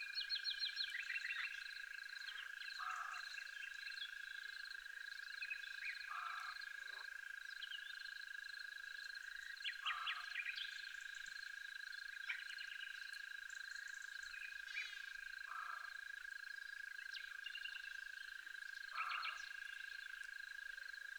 Unnamed Road, Colomieu, France - printemps dans le Bugey, coucher du jour
Dans le décors du film "l'enfant des marais"
Tascam DAP-1 Micro Télingua, Samplitude 5.1